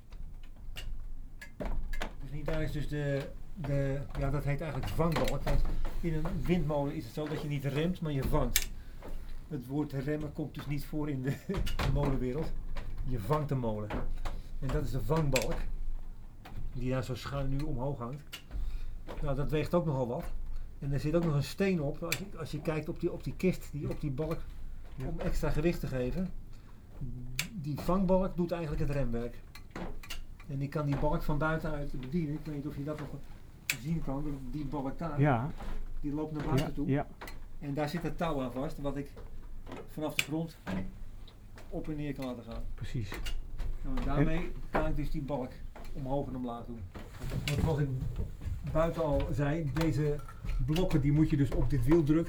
naar boven onder de molenkap zonder te malen - het geluid van remmen heet vangen
remmen heet vangen /
about the breaks of the windmill
9 July 2011, 14:23